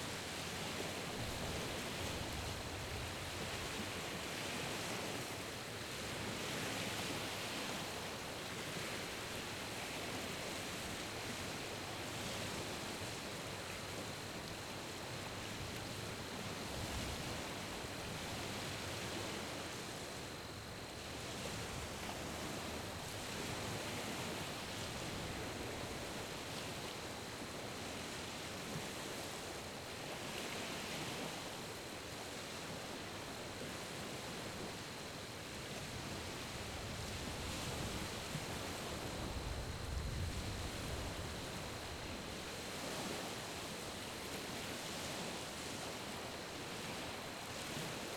Lake Wister State Park
Recorded from a lakeside campsite. The sound of the waves from the lake coming ashore are heard.
Recorded with a Zoom H5
Oklahoma, United States, April 2022